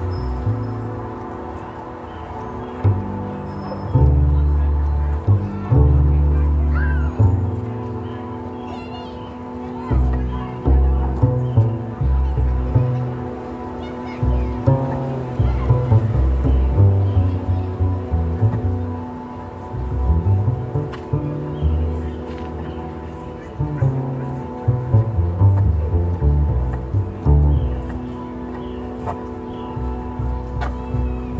Sorauren Park Town Square, Wabash Ave, Toronto, ON, Canada - Jazz Park Sound Fest
Brought speakers to a park with sounds of previous days playing through them. Ian playing bass on top. Recorded all together
Ontario, Canada, 28 June 2020